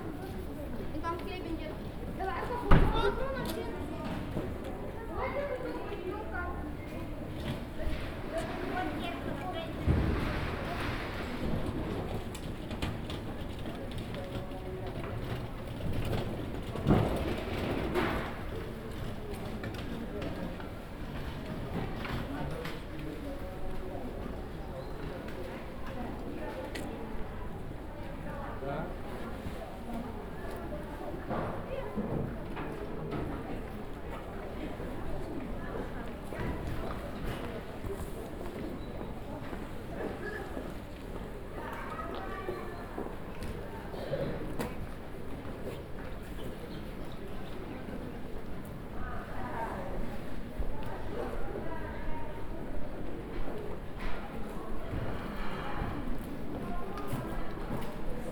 Birobidzhan, Jewish Autonomous Region, Russia - Market at closing time - Soundwalk
Crossing the market, mostly under the roof. Babushkas, kids, footsteps, foil, cardboard. Binaural recording (Tascam DR-07+ OKM Klassik II).